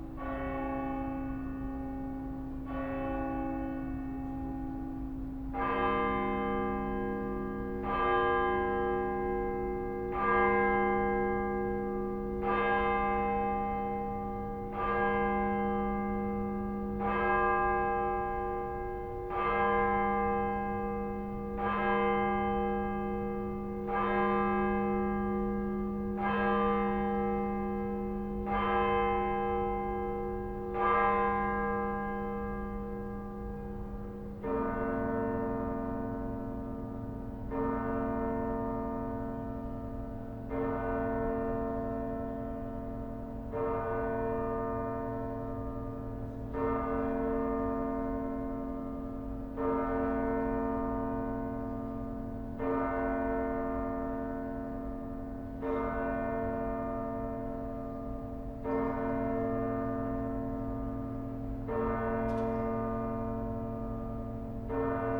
2 DPA 4060 recording the Midnight bells of the Munster Ulm
Ulm, Germany, December 2014